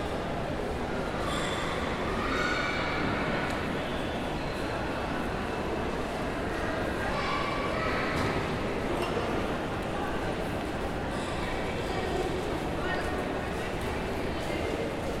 Aeroport, Barcelona, Spain - (-206) Airport walks
Recording of an airport ambiance.
Recorded with Zoom H4
Barcelona, Catalunya, España, 5 August 2021